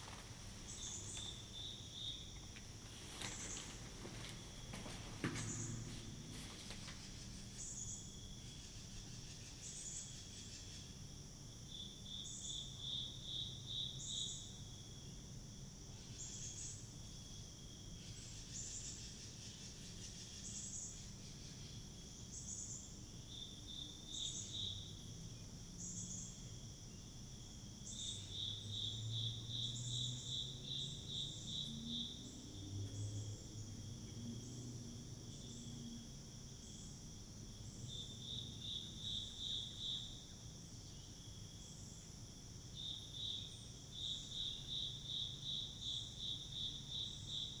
Tunnel, Ballwin, Missouri, USA - Koridorius
Recording from within a low 90 year old tunnel that passes under train tracks. A cricket marks time like the ticking of a clock. Biophonic and anthrophonic sounds captured internal and external to the corridor. Internal: cricket, footsteps. External: birds, katydids, airplane, voices.
27 September, ~10:00